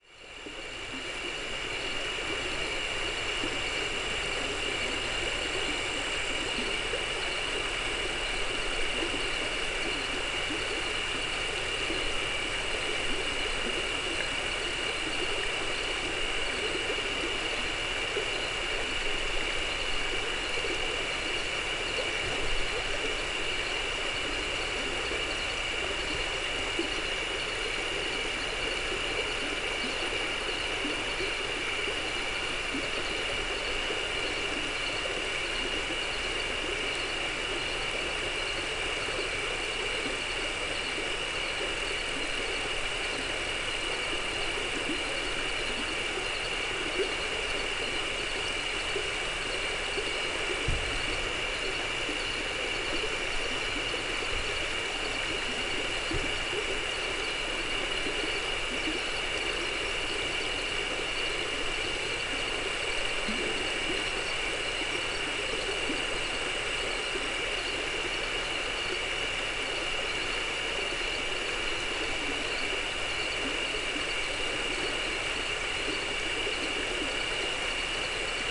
Recorded with a Marantz PMD661 and a pair of DPA 4060s
TX, USA, 3 October 2015